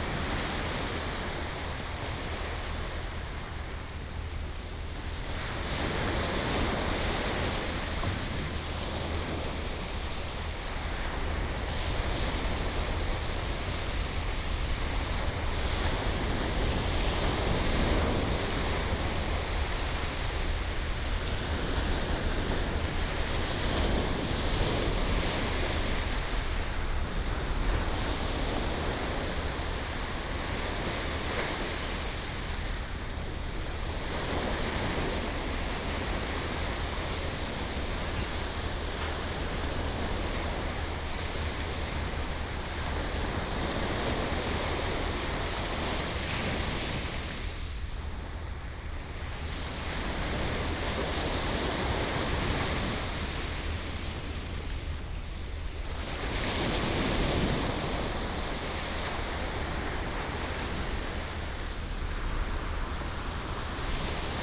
Tamsui Fisherman's Wharf, New Taipei City - On the beach
On the beach, Sound of the waves
New Taipei City, Taiwan, 5 January 2017